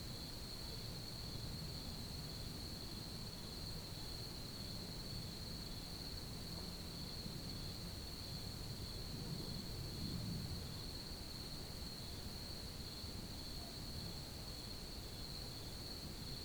Hometown night ambient
DR-44WL, integrated microphones.
Early night soundscape, birds (phesant and others I don't know), Melolontha melolontha, small frogs(?) lurking around.
Dog barking and passing cars.
The location is approximate due privacy concerns.